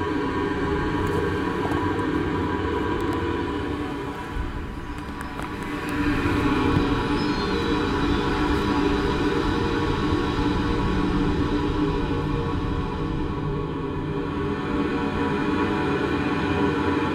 Hornické muzeum Vinařice, Czechia - Parní těžní stroj Ringhofer z roku 1905
Dvoučinný parní stroj firmy Ringhoffer Praha - Smíchov zakoupila na Světové výstavě v Paříži v roce 1905 Pražská železářská společnost. Na šachtě Mayrau ve Vinařicích u Kladna byl instalován a v provozu až do roku 1994. Je poháněn elektromotorem. Zvuk byl nahrán u venkovní zdi u železné roury, která odváděla stlačený vzduch z pístů. Do dýchání stroje zní letadlo a ptačí zpěv.
8 April 2022, ~16:00